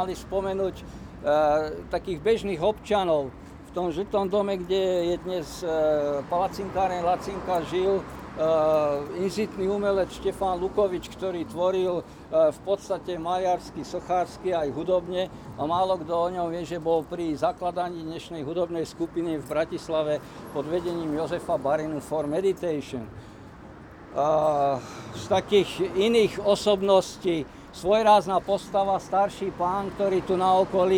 Unedited recording of a talk about local neighbourhood.
Bratislava, Slovakia